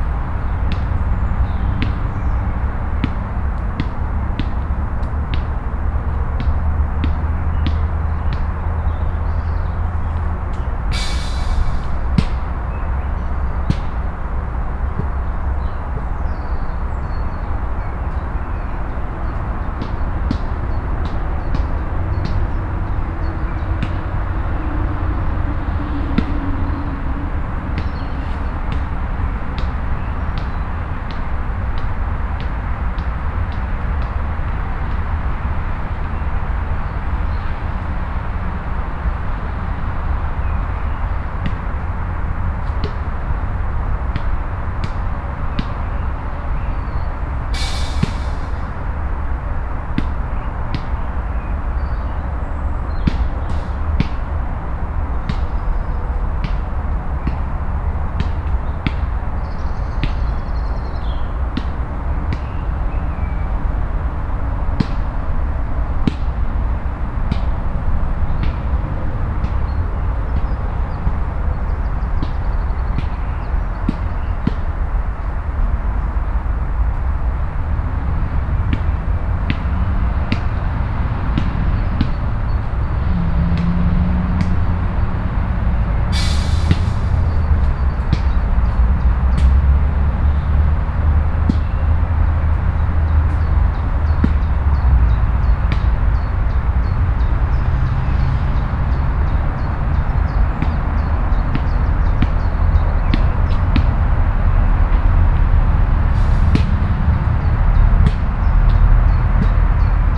Diegem, near the Woluwelaan, a young man playing basket-ball
Machelen, Belgium, 10 May